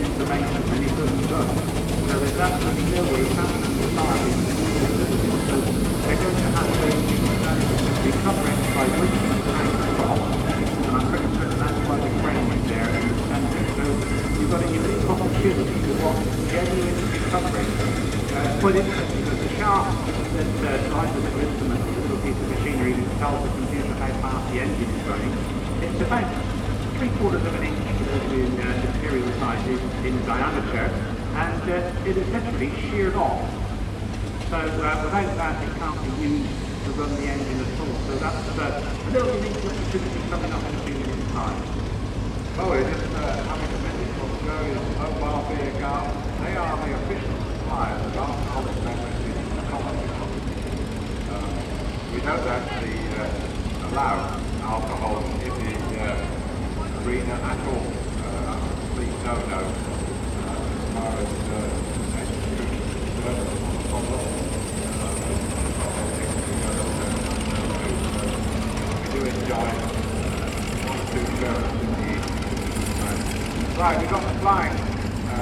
{"title": "The Great Dorset Steam Fair, Dairy House Farm, Child Okeford, Dorset - Steam contraptions parading with commentary", "date": "2016-08-29 10:55:00", "description": "(location might be slightly wrong) The Great Dorset Steam Fair is unbelievably big. There are hundreds and hundreds of steam things in what seems like a temporary town across many fields. In this recording, engines and steam contraptions of all kinds parade around a big field as a dude commentates through the tannoy system.", "latitude": "50.91", "longitude": "-2.08", "altitude": "83", "timezone": "Europe/London"}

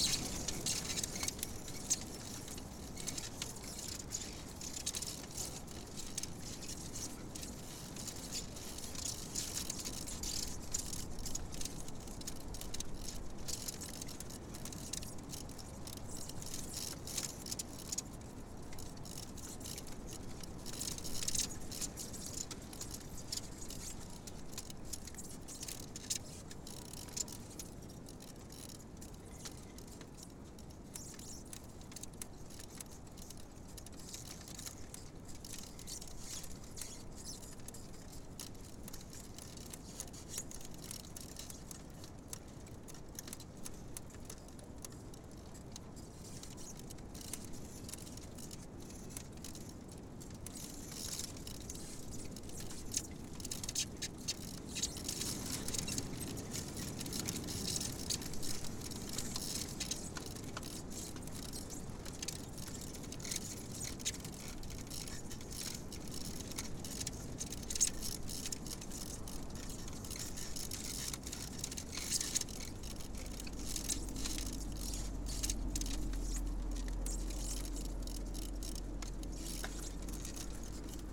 {"title": "Vyzuoneles, Lithuania, broken tiny ice", "date": "2021-03-06 16:20:00", "description": "Floded meadow. Stormy day. Tiny ice is broken by moving branches of trees...", "latitude": "55.53", "longitude": "25.55", "altitude": "93", "timezone": "Europe/Vilnius"}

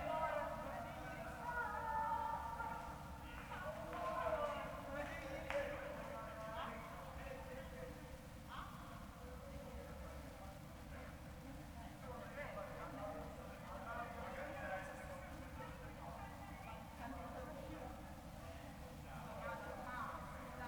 {"title": "Ascolto il tuo cuore, città. I listen to your heart, city. Several chapters **SCROLL DOWN FOR ALL RECORDINGS** - Round midnight March 25 2020 Soundscape", "date": "2020-03-25 23:35:00", "description": "\"Round midnight March 25 2020\" Soundscape\nChapter XXII of Ascolto il tuo cuore, città, I listen to your heart, city\nWednesday March 25th - Thursday 26nd 2020. Fixed position on an internal terrace at San Salvario district Turin, fifteen days after emergency disposition due to the epidemic of COVID19. Same position as previous recording.\nStart at 11:35 p.m. end at 00:21 a.m. duration of recording 45'36''.", "latitude": "45.06", "longitude": "7.69", "altitude": "245", "timezone": "Europe/Rome"}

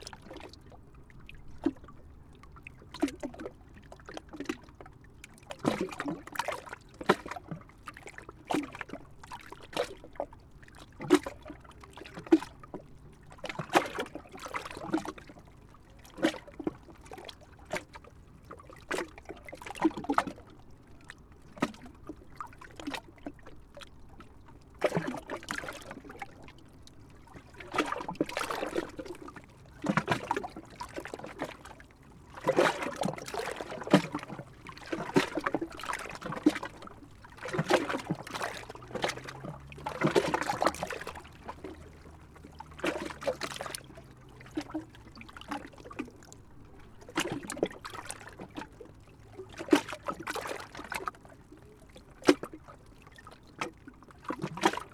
{"title": "Asker, Norway, between the stones", "date": "2013-08-13 12:20:00", "latitude": "59.85", "longitude": "10.50", "altitude": "9", "timezone": "Europe/Oslo"}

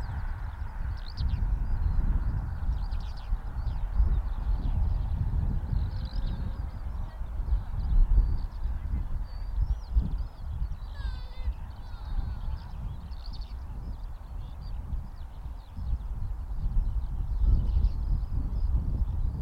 {"title": "Woodhenge, Durrington, UK - 046 Birds, cars, children", "date": "2017-02-15 13:15:00", "latitude": "51.19", "longitude": "-1.79", "altitude": "100", "timezone": "GMT+1"}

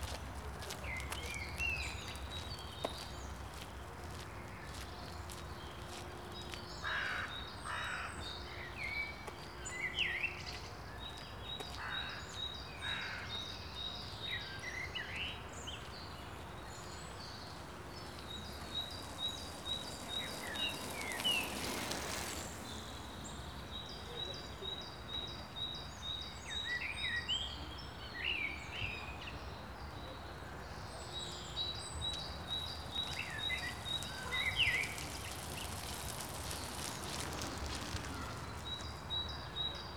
{"title": "Poznan, Golecin district, forest patch to Rusalka lake - spring birds and park strollers", "date": "2014-03-29 15:00:00", "description": "lots of bird activity in the park during first warm spring days. strollers walking here and there on a gravel path. bikes passing by.", "latitude": "52.42", "longitude": "16.89", "altitude": "73", "timezone": "Europe/Warsaw"}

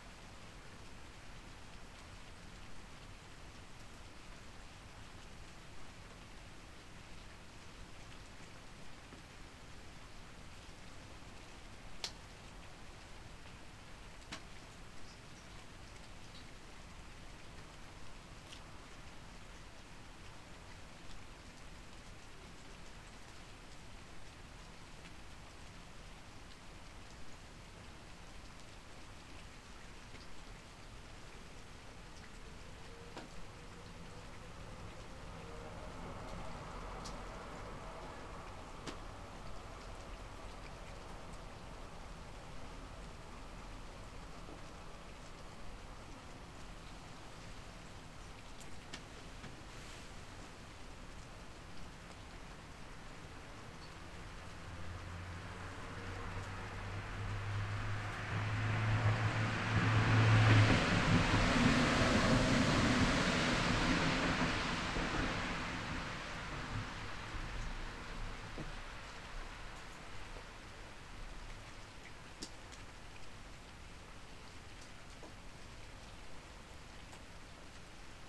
{"title": "mainz-gonsenheim, am haag: terrasse - the city, the country & me: covered porch", "date": "2010-10-16 01:03:00", "description": "under a covered porch while it rains\nthe city, the country & me: october 16, 2010", "latitude": "50.00", "longitude": "8.22", "altitude": "123", "timezone": "Europe/Berlin"}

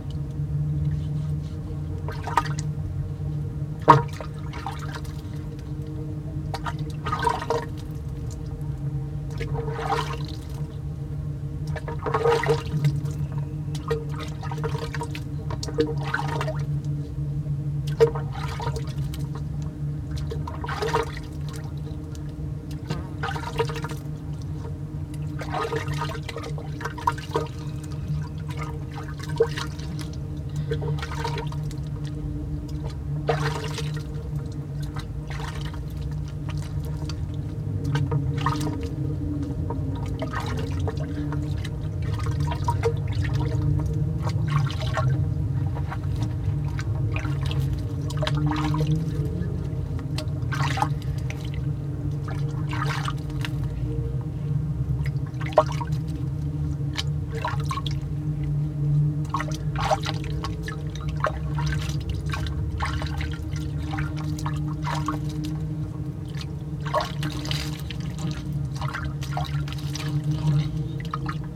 {"title": "Manresa, Barcelona, Spain - bell and wash resonance, manresa", "date": "1992-08-05 08:19:00", "description": "sound of church bell and washing resonated in metal container.\nSony MS mic, Dat recorder", "latitude": "41.72", "longitude": "1.82", "altitude": "244", "timezone": "Europe/Madrid"}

